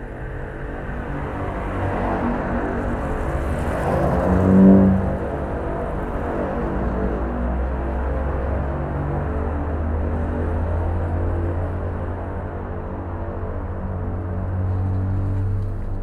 hidden sounds, traffic filtered by a barrier blocking cars from entering a paid car park at Tallinns main train station.